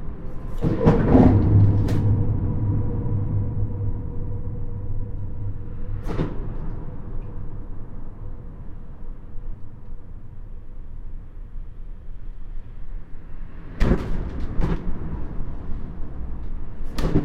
Hayange, France - Inside the bridge
Inside the concrete viaduct overlooking the Hayange town. Traffic-related shocks are very violent. This is the expansion joint of the bridge.
9 February 2019, 17:00